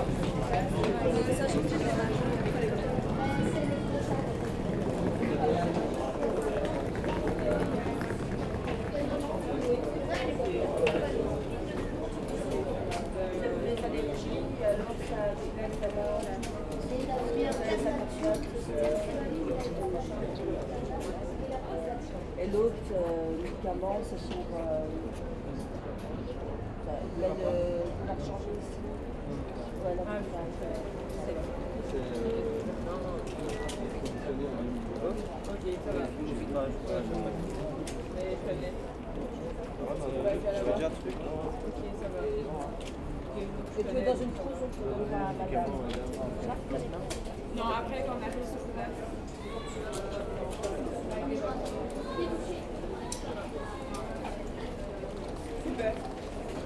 Charleroi, Belgium - Charleroi station
Walking in the Charleroi train station, and after in the Tramway station. Quite the same sounds as Flavien Gillié who was at the same place a year ago.
11 August